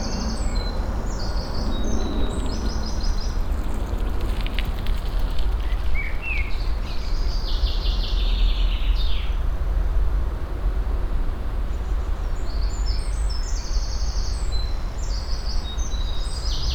cologne, merheim, merheimer heide, forrest and plane
soundmap nrw: social ambiences/ listen to the people in & outdoor topographic field recordings